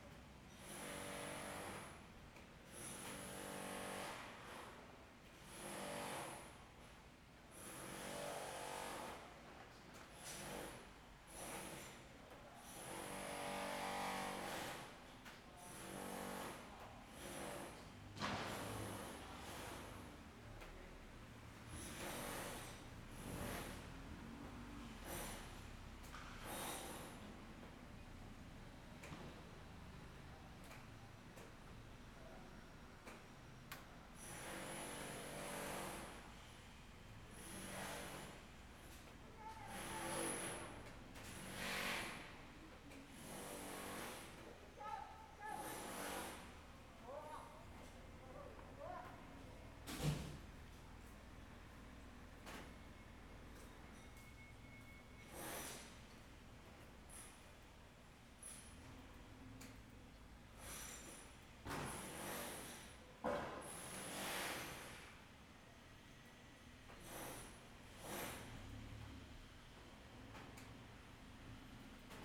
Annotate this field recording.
Rain, Construction, Traffic Sound, Zoom H6 MS